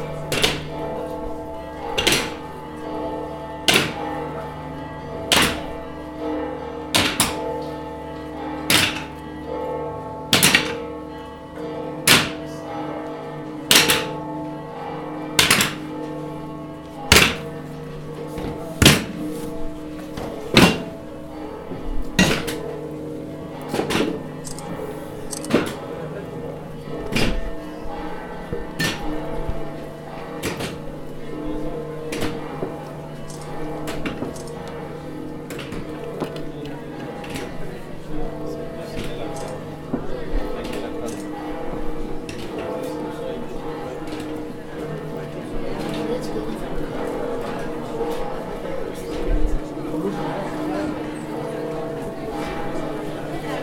Old City of Jerusalem - Greek Orthodox Processional
Encountering a Greek Orthodox processional in the crowded market of the Old City of Jerusalem. A group of priests leading with staves pounding the ground, followed by a crowd of around 100 people as the bells toll from various churches.